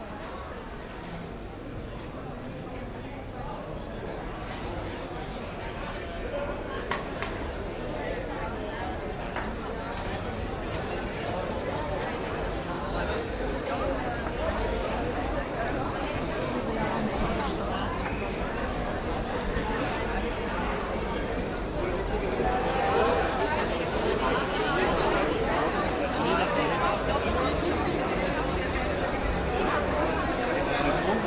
Aarau, Walk, Evening before Maienzug, Schweiz - Vorabend1
A short walk through Milchgasse, Rathausgasse to Kirchplatz, where canons are going to be prepared. Note the quite different sound compared to earlier walks.